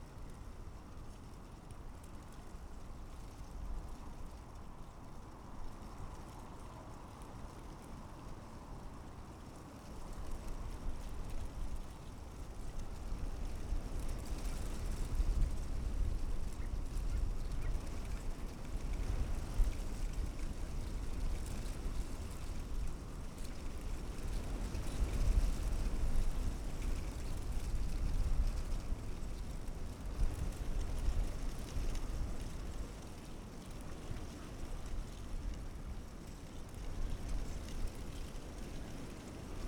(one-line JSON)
{"title": "berlin: tempelhofer feld - the city, the country & me: willow tree", "date": "2014-02-08 14:10:00", "description": "dry leaves of a willow (?) tree rustling in the wind\nthe city, the country & me: february 8, 2014", "latitude": "52.47", "longitude": "13.41", "timezone": "Europe/Berlin"}